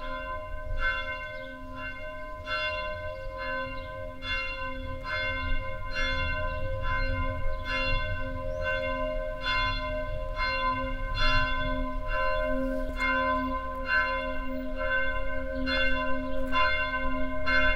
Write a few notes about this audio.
Outside the church of Hoscheid nearby the bell tower. The noon bells on a warm but windy summer day. Hoscheid, Kirche, Glocken, Außerhalb der Kirche von Hoscheid nahe beim Glockenturm. Die Mittagsglocke an einem warmen aber windigen Sommertag. Hoscheid, église, cloches, Dehors, à proximité du clocher de l’église de Hoscheid. Le carillon de midi, un soir d’été chaud mais venteux. Project - Klangraum Our - topographic field recordings, sound objects and social ambiences